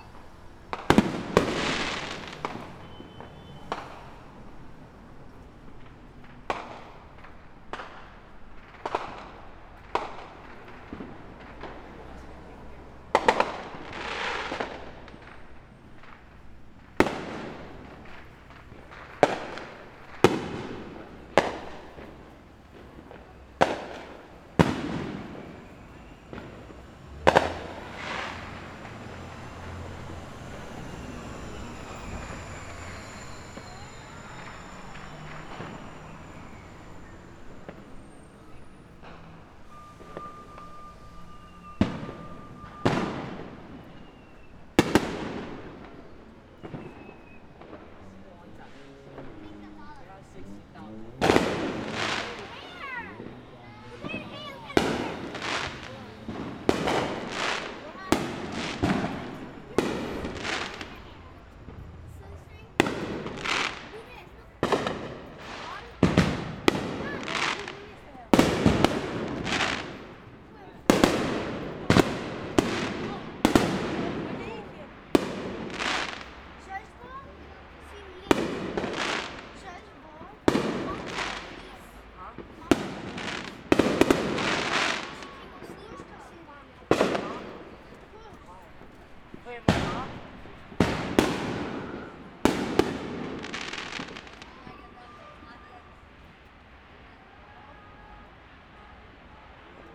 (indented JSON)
{
  "title": "Ridgewood, Queens - 4th of Juy Celebration in Ridgewood, Queens",
  "date": "2017-07-04 21:00:00",
  "description": "This recording is a soundwalk around the Ridgewood, Queens neighbourhood during the celebration of the 4th of July 2017. Lots of families gathered in the streets having barbecues and throwing fireworks. In each corner of the neighboorhood hundreds of small fireworks were bursting just above our heads. Ridgewood sounded like a war zone if it were not for the laughing and enthusiasm of everyone celebrating.\nRecorded with Zoom H6\nCarlo Patrão",
  "latitude": "40.70",
  "longitude": "-73.91",
  "altitude": "27",
  "timezone": "America/New_York"
}